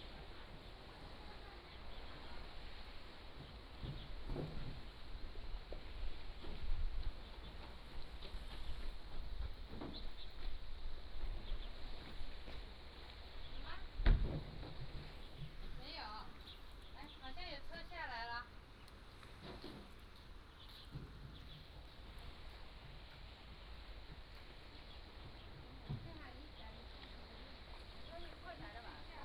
橋仔村, Beigan Township - Greet
Small village, Greet